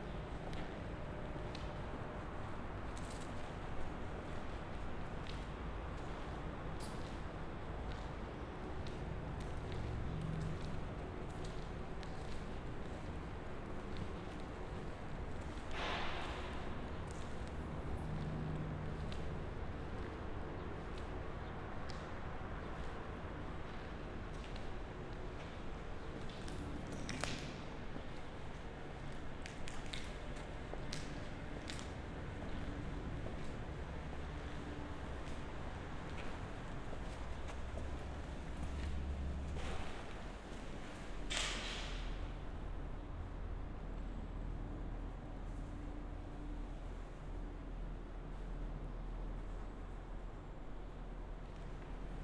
abandoned factory, Neukölln, Berlin - walk in empty factory
walk in abandoned CD factory, broken disks all over on the ground, hum of surrounding traffic in the large hall.
(SD702, Audio Technica BP4025)
13 July, 14:00